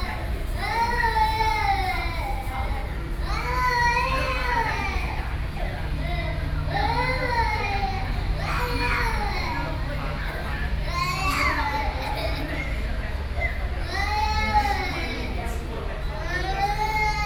Taipei Songshan Airport, Taiwan(TSA) - Airport
Taipei City, Taiwan, 9 November 2012, 12:42